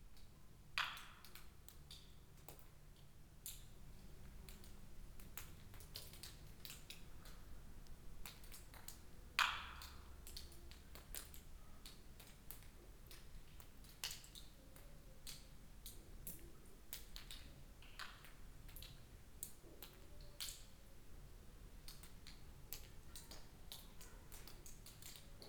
Corniglia - drops in railroad tunnel
water drops sipping from the ceiling of an abandoned railroad tunnel that curves under the village. (binaural)
La Spezia, Italy